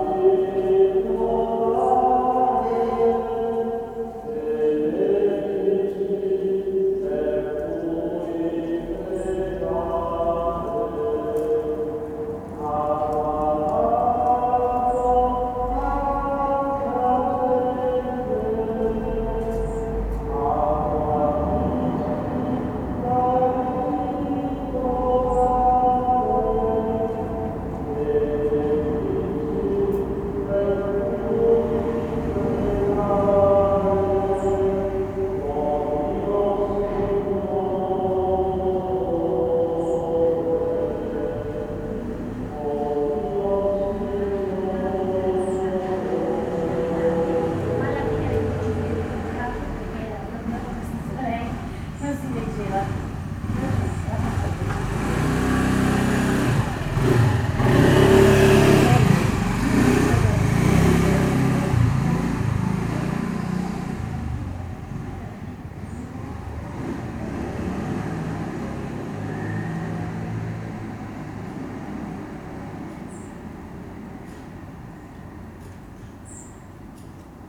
San Michele Church, Pavia, Italy - 05 - October, Wednesday 430PM, 14C, Vespri
Vesper choirs recorded from outside, close to the small single-lancet window of the Church, while few people passing by